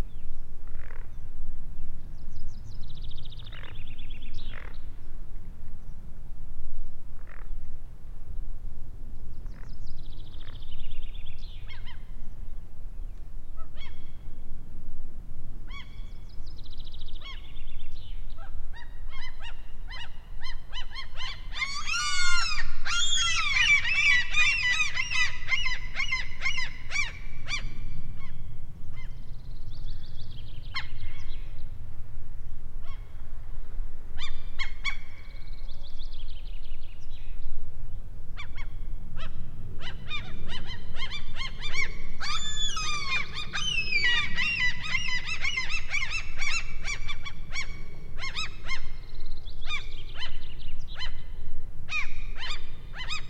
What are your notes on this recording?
Nesting gulls. Birds washing. Sea and a plane is keynote in background. Talking in background. Group kaving Fika. Rode NT4